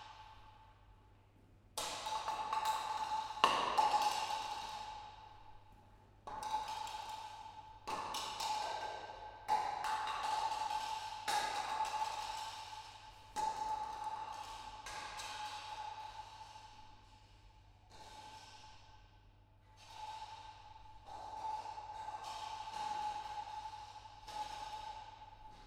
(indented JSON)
{
  "title": "Rijeka, Riteh.uniri, DIN, Cofffe Reverb Room",
  "date": "2011-05-24 20:18:00",
  "latitude": "45.34",
  "longitude": "14.43",
  "altitude": "77",
  "timezone": "CET"
}